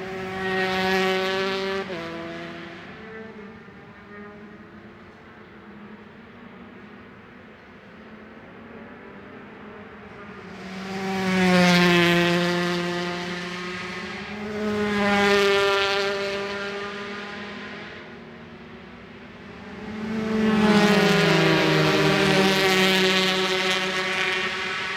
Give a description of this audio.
british superbikes 2004 ... 125s qualifying one ... one point stereo mic to minidisk ...